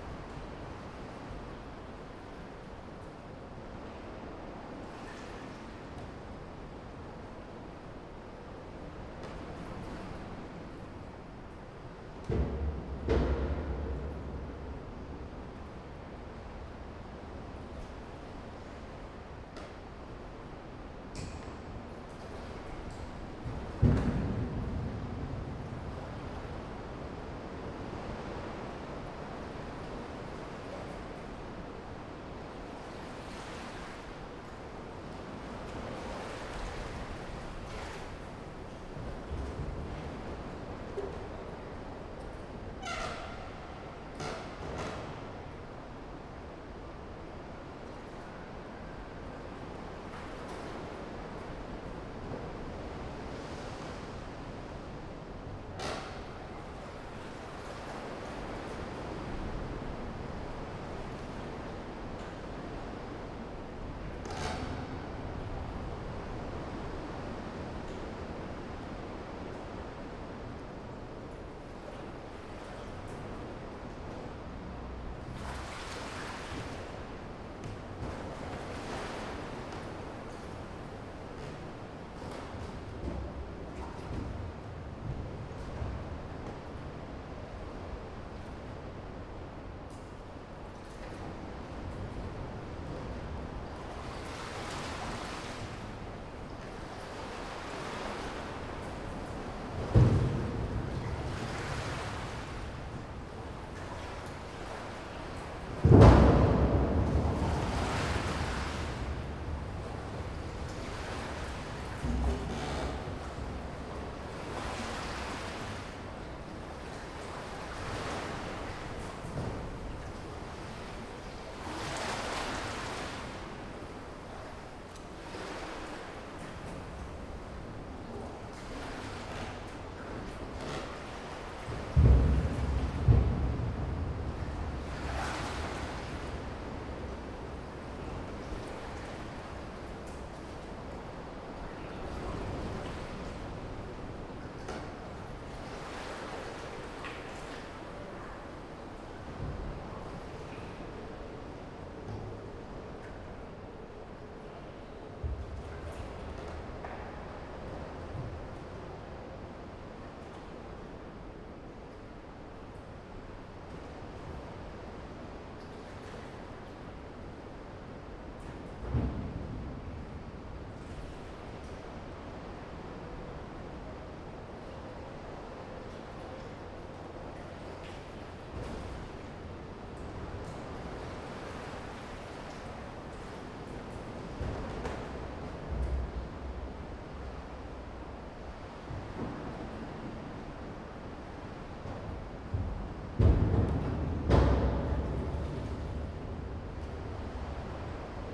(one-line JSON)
{"title": "北海坑道, Nangan Township - Abandoned War trenches", "date": "2014-10-14 14:39:00", "description": "Abandoned War trenches, Wave\nZoom H6 +Rode NT4", "latitude": "26.14", "longitude": "119.93", "altitude": "65", "timezone": "Asia/Taipei"}